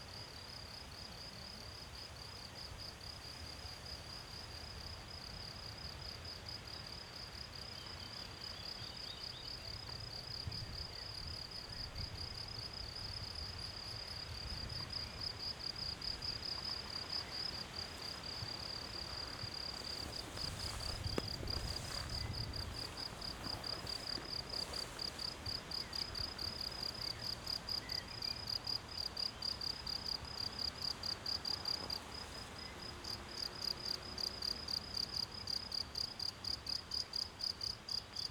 Maribor, Piramida - meadow, crickets, wind
crickets and wind in trees on Piramida hill
Maribor, Slovenia